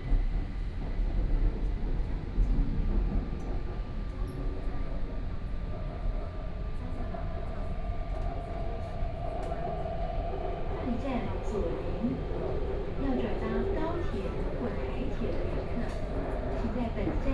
左營區祥和里, Kaohsiung City - in the MRT
Kaohsiung Mass Rapid Transit, from World Games station to Zuoying